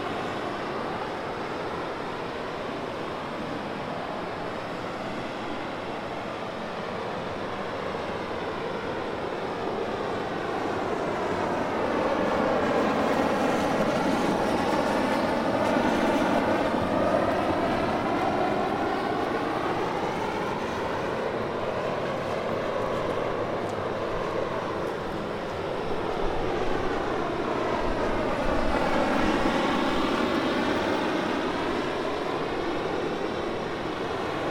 Cerezales del Condado, León, España - Taller MT Soplete